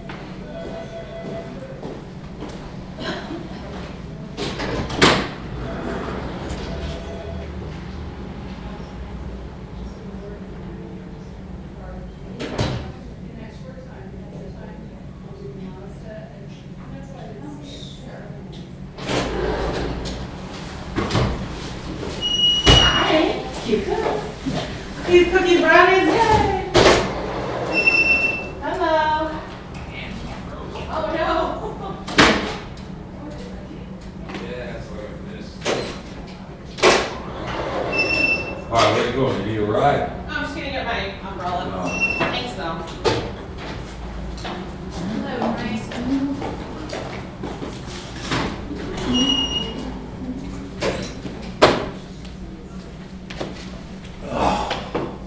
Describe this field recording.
Recorded inside the security office at Muhlenberg College during a busy day.